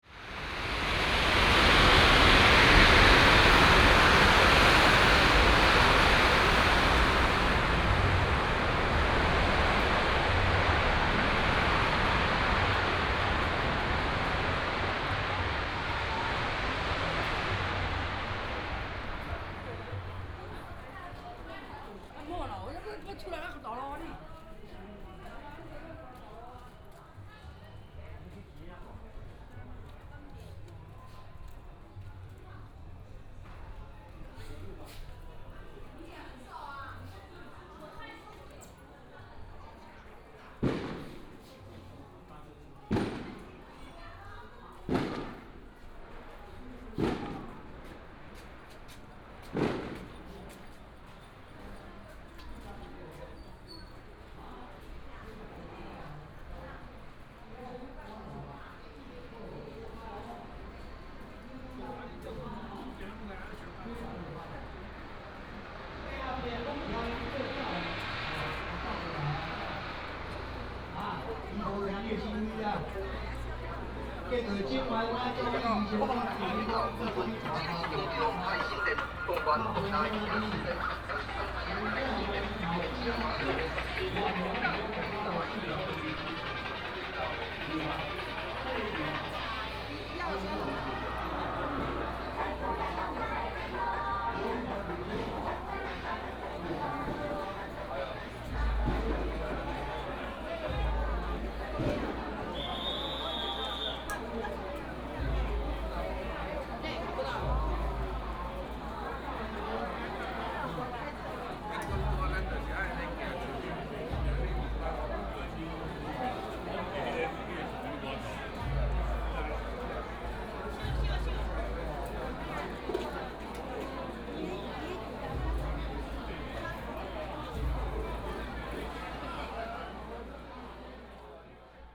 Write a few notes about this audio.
Walking through the alley, Fireworks and firecrackers, Temple fair